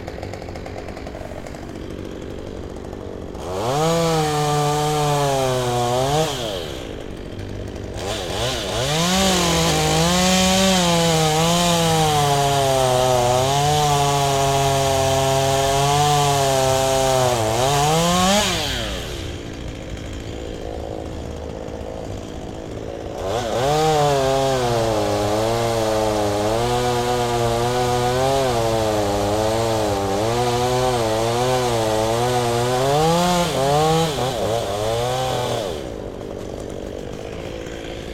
Unnamed Road, Toulouse, France - winter chainsaw
chainsaw in the park in winter
Captation ZOOM H6